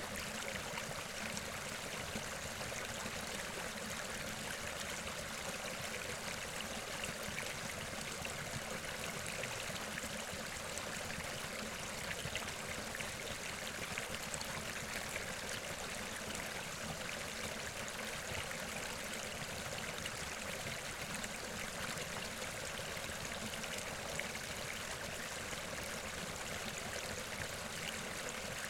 little waterfall at the river and the second part of recording is underwater recording of the same place
7 November 2018